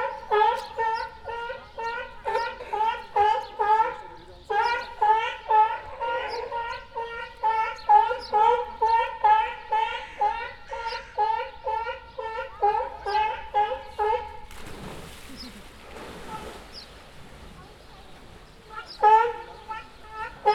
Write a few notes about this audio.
the seal was trying to convince the other seals to play with it and was very disappointed that they wouldn